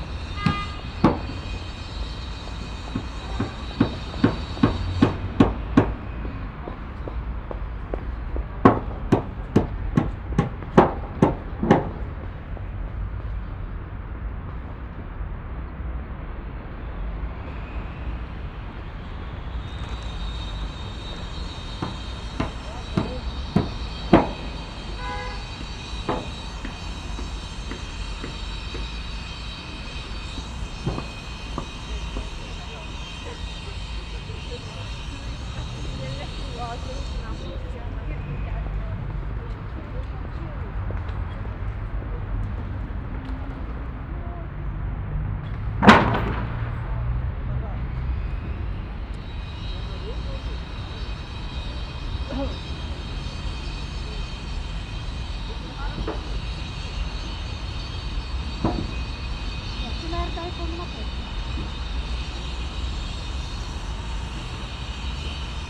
Central Area, Cluj-Napoca, Rumänien - Cluj, Piata Unini, construction of a scating rink
At the Piata Unini. The sound of the construction of a wooden platform for the winter scating rink reverbing on the big central square.
international city scapes - topographic field recordings and social ambiences